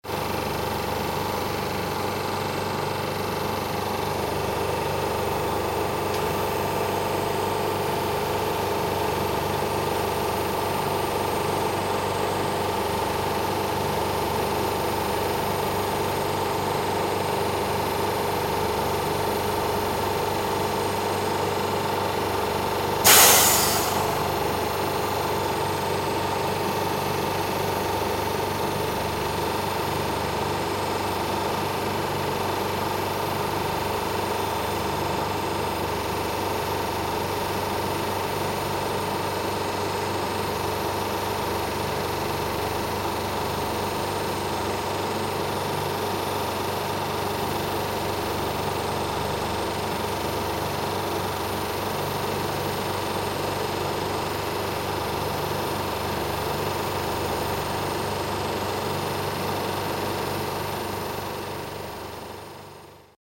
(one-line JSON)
{"title": "stable, air condition - stable, generator", "description": "stafsäter recordings.\nrecorded july, 2008.", "latitude": "58.29", "longitude": "15.67", "altitude": "98", "timezone": "GMT+1"}